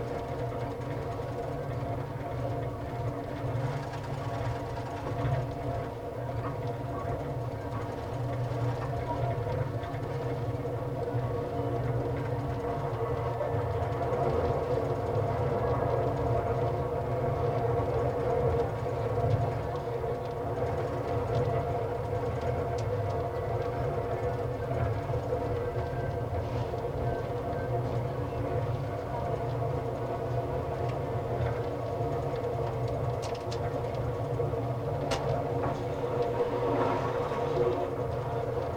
Timuran Express from Johor Bharu and Jeranut
(zoom H2, contact mic)
Johor, Malaysia